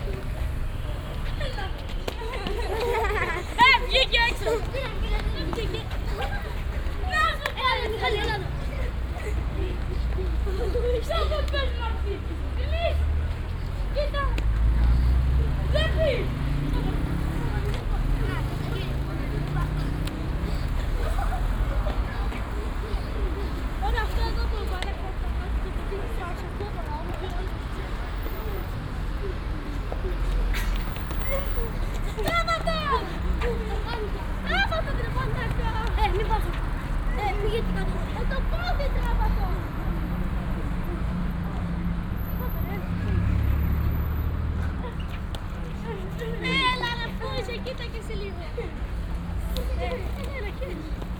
evening at Victoria square, four kids playing martial arts fights and beat each other quite hard. waves of traffic, a tiny cyclist demonstration passing by.
(Sony PCM D50, OKM2)
Athina, Greece